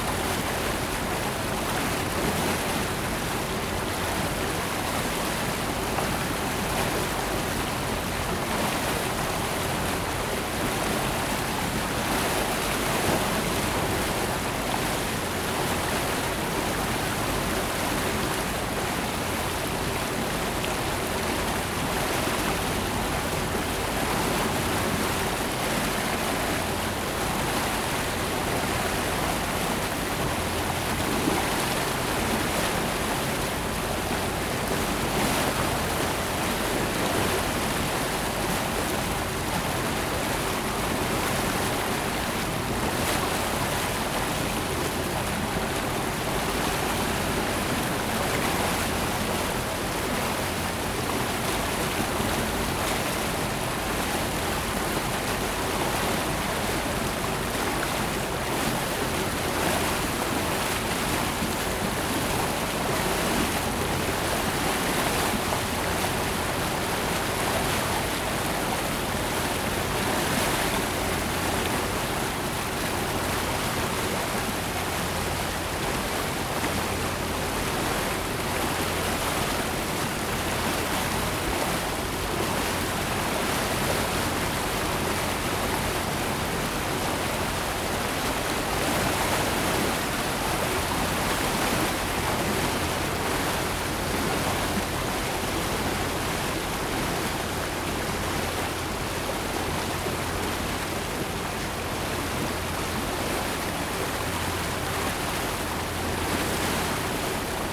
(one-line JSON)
{"title": "Faubourg Saint-Germain, Paris, France - Aurora - boat trip on the Seine", "date": "2014-08-17 12:30:00", "description": "Boat trip on the Seine, from the deck of the \"Aurora\".", "latitude": "48.85", "longitude": "2.28", "altitude": "30", "timezone": "Europe/Paris"}